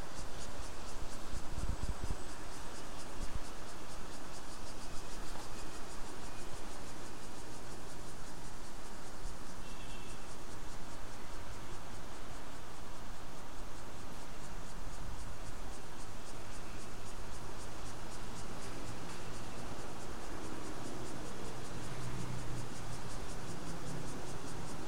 Morning on the Balcony - Morning on the Balcony (exit5, Guting Station)
I walked on the balcony and heard the voices of the view below.